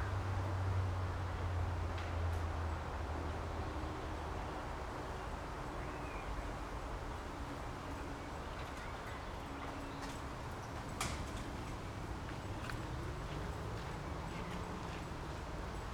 berlin: insulaner - the city, the country & me: in front of wilhelm-foerster-observatory
wind blows through trees, traffic noise in the distance
the city, the country & me: may 10, 2015
Berlin, Germany, May 2015